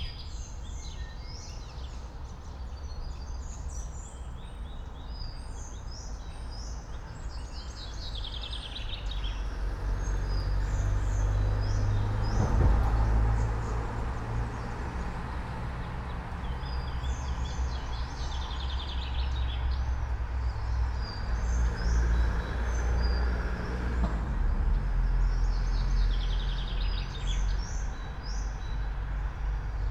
all the mornings of the ... - may 3 2013 fri
Maribor, Slovenia